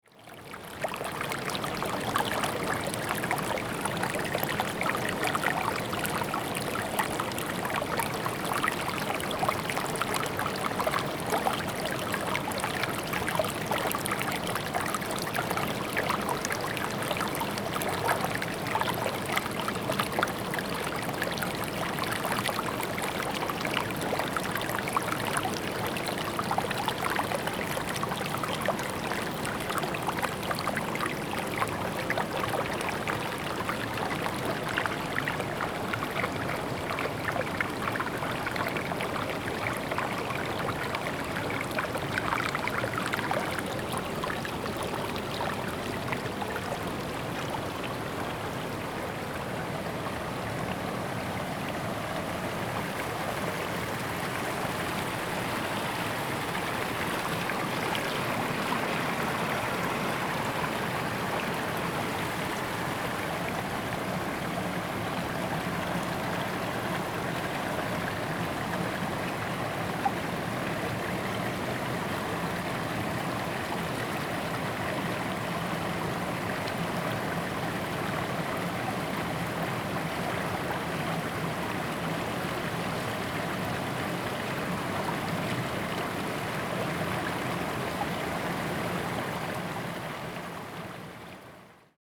種瓜坑溪, 埔里鎮 Nantou County, Taiwan - the river
Brook, In the river
Zoom H2n MS+XY
19 April, ~16:00